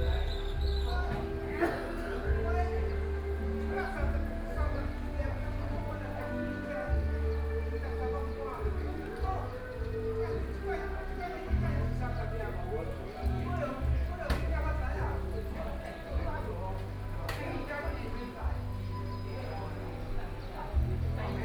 三星鄉天山村, Yilan County - Funeral
Funeral, Rainy Day, Small village, Traffic Sound
Sony PCM D50+ Soundman OKM II
Sanxing Township, Yilan County, Taiwan